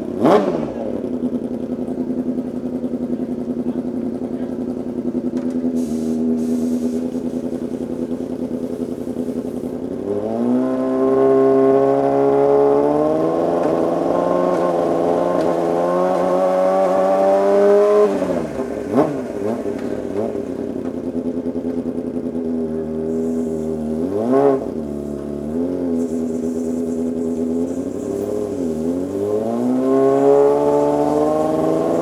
Silverstone Circuit, Towcester, UK - day of champions 2013 ... pit lane walkabout ...
day of champions 2013 ... silverstone ... pit lane walkabout ... rode lavaliers clipped to hat to ls 11 ...
England, United Kingdom, 29 August